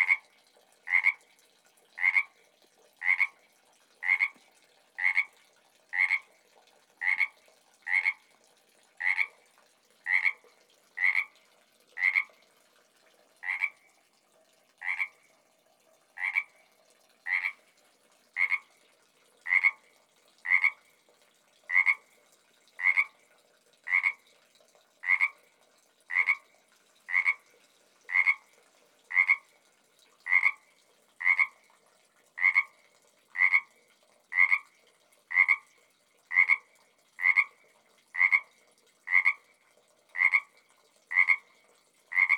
SMIP RANCH, D.R.A.P., San Mateo County, CA, USA - Frog at the Old Barn
Frog found in metal tub by the "Old Barn"
13 June 2014